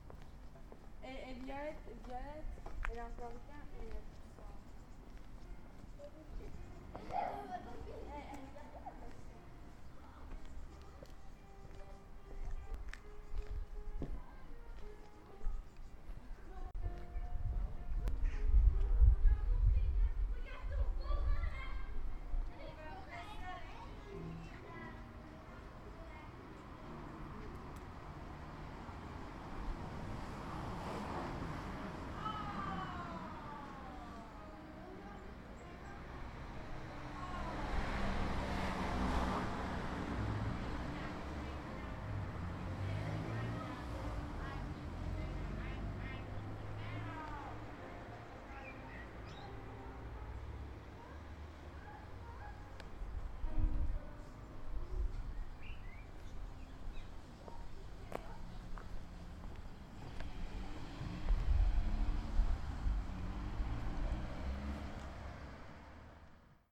Saint-Brieuc, France - in and out of the Villa Carmélie Music center
In and Out of the music, dance and art center's entrance hall.with a bizarre door sound. Children waiting outside are chatting, a piano melody comes out of one of the windows, merging with cars and city sounds.
13 May, 18:25